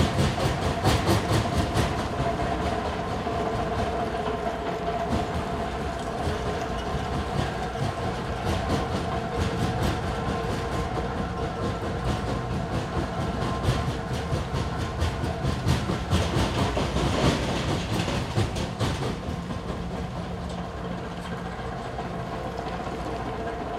Camborne, Cornwall, UK - Industrial Stone Breaker
I was taking a walk in the woods and came across a piece of land that was being cleared, there was a massive piece of machinery that was being fill with boulders which then turned them into smaller rocks, I was around 50 metres away. Recorded with DPA4060 microphones and a Tascam DR100.